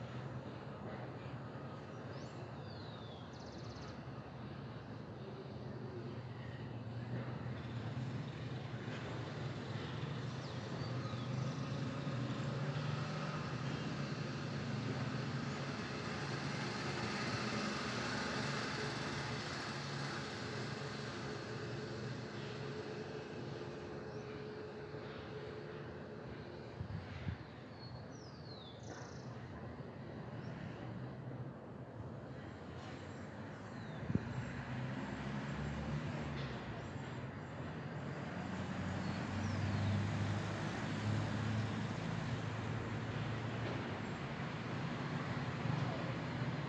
Cl. 143 ## 9 - 04, Bogotá, Colombia - Ambiente Parque de Belmira
In this ambience you can hear a not so crowded park in a cul-de-sac of calle 143 con 9 en belmira en cedritos, the environment is calm and you can perceive the song of the birds.
14 May, 11:00